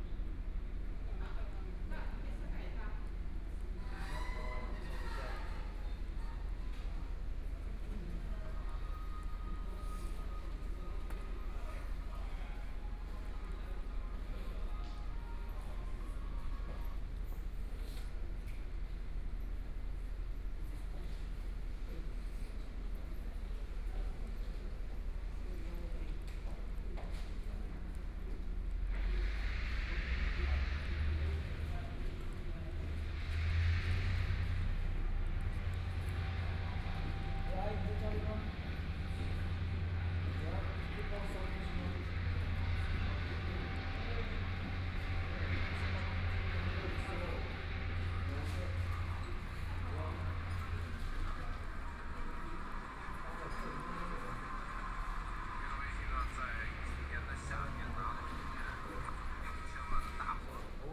Dialogue among high school students, Traffic Sound, Mobile voice, Binaural recordings, Zoom H4n+ Soundman OKM II